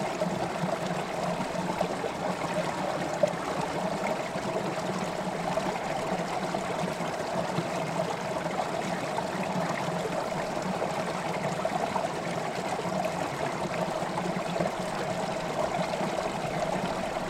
Boulder, CO, USA - faster flowing water
Around the bend near more intense water flow
14 February